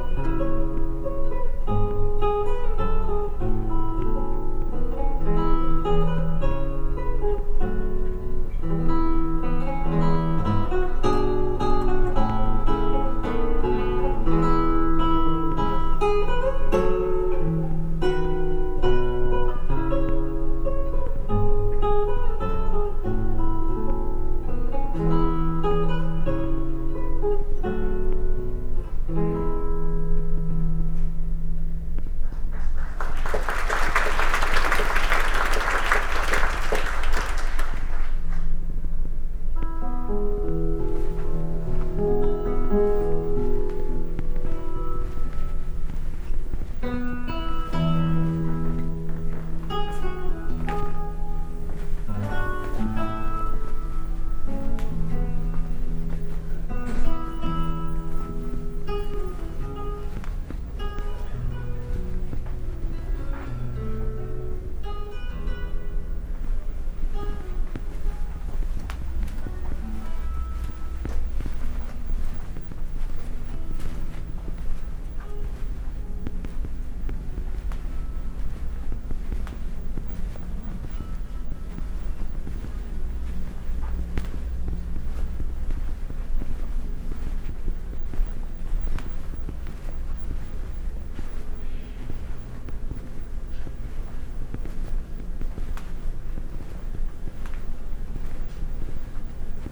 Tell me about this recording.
Recorded on a trans atlantic crossing Southampton-New York while walking from deck 7, the Kings Court self service dining area along to The Corinthian Room, down to the Main Concourse on deck 3 and finally deck 2 outside the computer area. I found walking without making creaking sounds impossible. The double chimes are the lifts. Heard are voices at a quiz in the Golden Lion Pub and part of a recital in The Royal Court Theatre. The final voices are teenagers outside Connexions, a public meeting area with computers. MixPre 3 with 2 x Beyer Lavaliers.